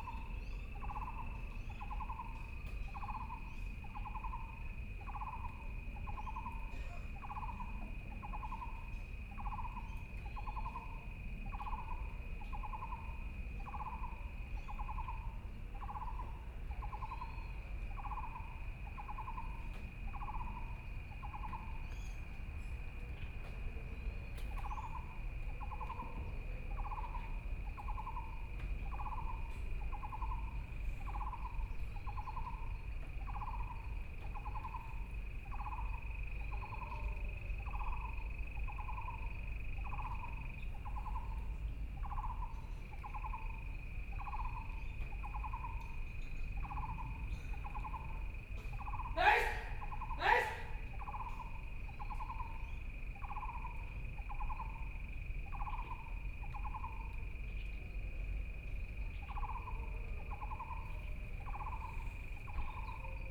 內湖區湖濱里, Taipei City - Community and Parks
Frogs sound, Insects sound, Birdsong, Dogs barking, Traffic Sound
Taipei City, Taiwan, 4 May 2014